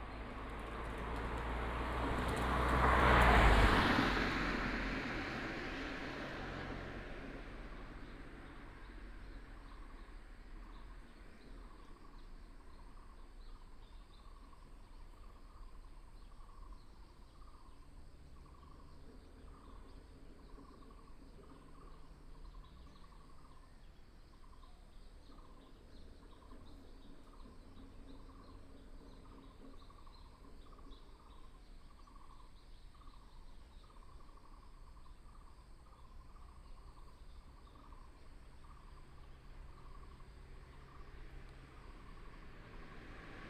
Bird call, Traffic sound, Construction sound
Binaural recordings, Sony PCM D100+ Soundman OKM II
14 March 2018, 10:29